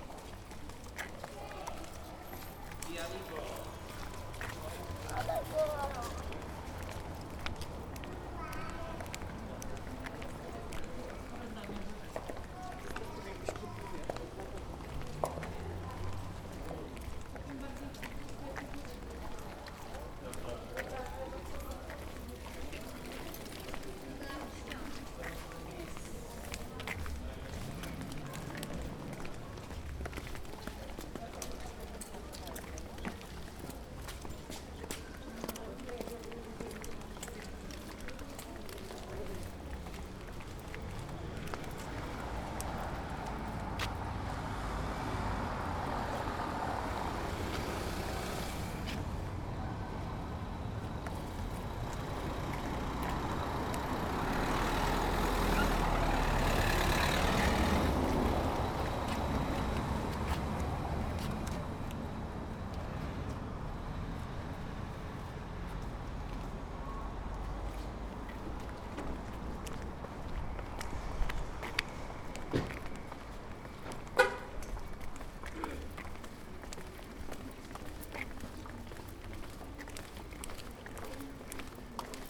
Soundwalk along ul. Szpitalna, Kraków, 13.15 - 13.25
Kraków, Poland